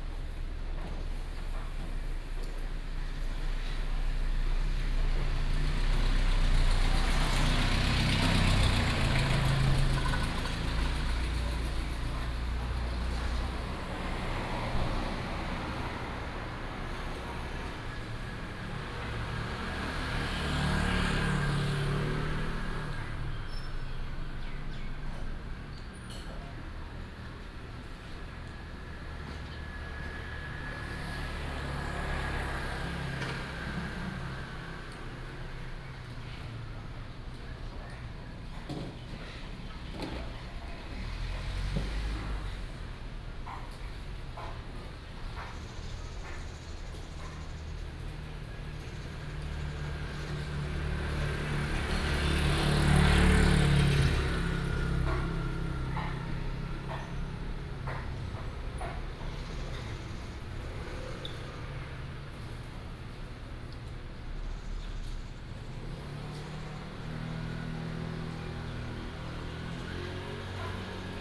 太麻里街74-78號, Tavualje St., Taimali Township - Small town street
Morning street, Traffic sound, Bird cry, Seafood shop, Small town street
Binaural recordings, Sony PCM D100+ Soundman OKM II
Taitung County, Taiwan, 13 April 2018